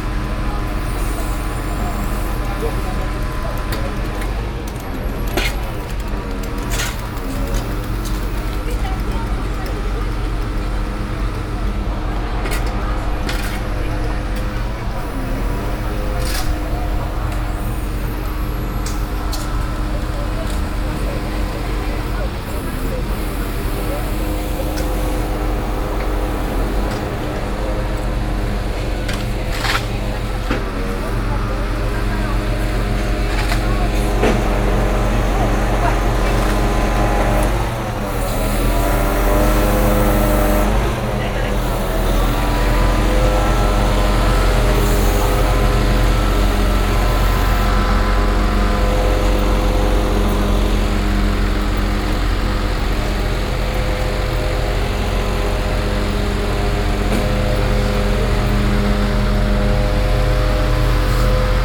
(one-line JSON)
{"date": "2011-06-17 11:27:00", "description": "Brussels, Place de Moscou, Real Democracy Now Camp, unmounting the camp.", "latitude": "50.83", "longitude": "4.35", "altitude": "46", "timezone": "Europe/Brussels"}